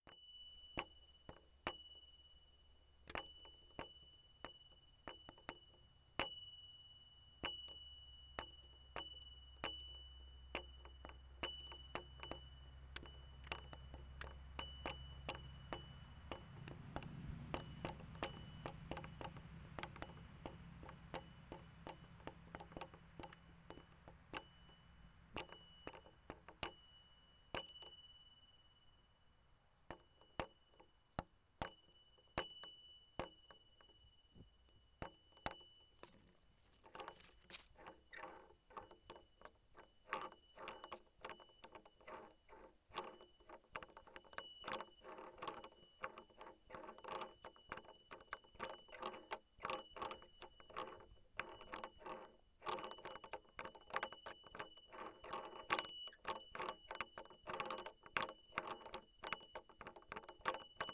Morasko Nature Reserve - washer
a sound of a metal washer nailed to a wooden pole i found in the forest (sony d50)
24 September 2015, 12:52